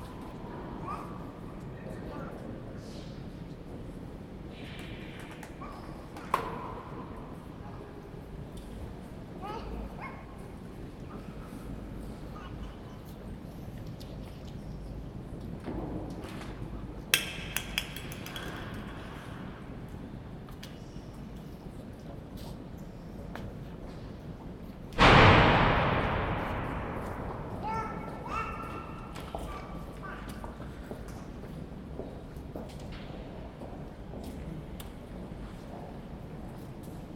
im Dom an einem Teelichfeld, ab und an fallen Münzen in einen Opferstock, Teelicher fallen herunter, abgebrannte Lichter werde beräumt und neue aufgestellt, ein Baby quengelt | in the cathedral beside a field of candle lights, sometimes coins falling in a offertory box, candle lights falling down, burndt down lights are put away and set up new, a baby whines